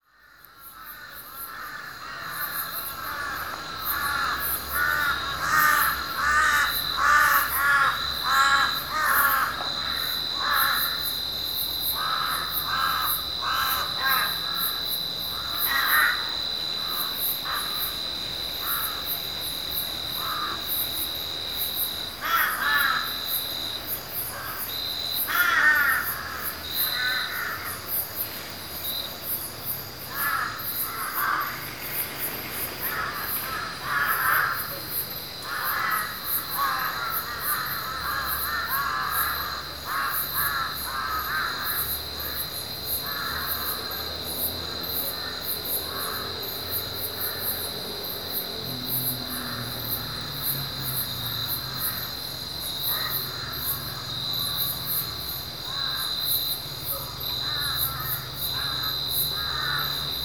Tanah Rata, Pahang, Malaysia - drone log 20/02/2913
evening ambience above the village
(zoom h2, binaural)